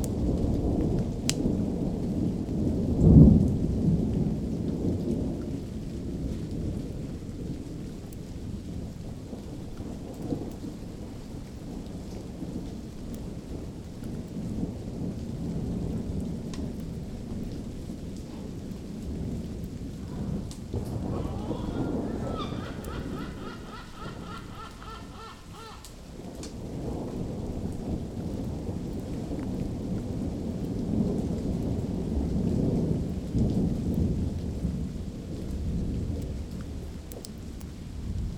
Copernicuslaan, Den Haag, Nederland - Thunder and rain
Typical summer thunder and rain.
(recorded with internal mics of a Zoom H2)
Zuid-Holland, Nederland, 10 June, ~15:00